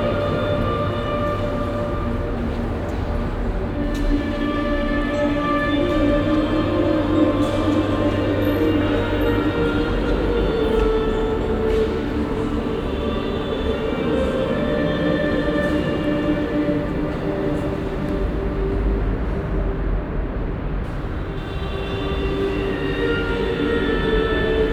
Ville Nouvelle, Tunis, Tunesien - tunis, cathedral, de st vincent de paul
Inside the Church hall. The sound of a choir recording that is being play backed inside and echoes inside the cathedral. At the end the traffic noise from outside slowly creeps into the hall again.
international city scapes - social ambiences and topographic field recordings
Tunis, Tunisia